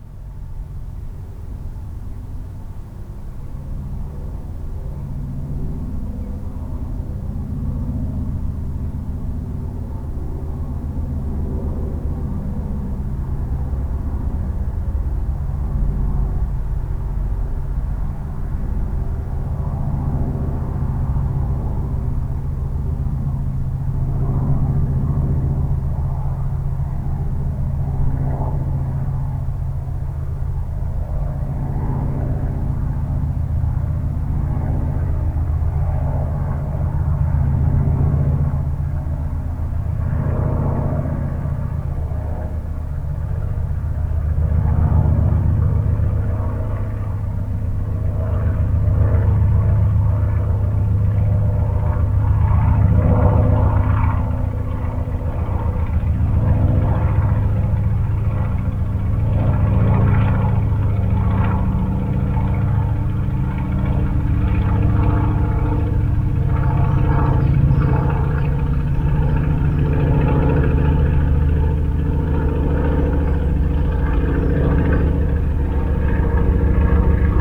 While preparing to launch skydivers an old bi-plane circles overhead the sound of the slow-revving engine echoes from the hills and surrounding landscape. MixPre 6 II 2 x Sennheiser MKH 8020s
England, United Kingdom, 17 June 2018